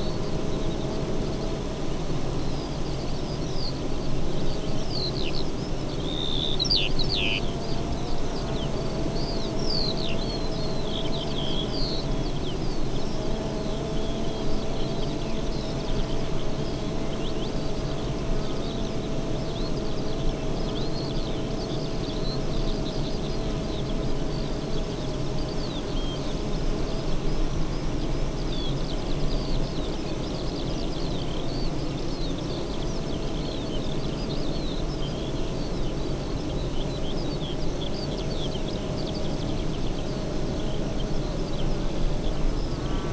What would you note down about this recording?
bee hives ... Zoom F6 to SASS ... eight hives in pairs ... SASS on ground facing a pair ... bird song ... skylark ...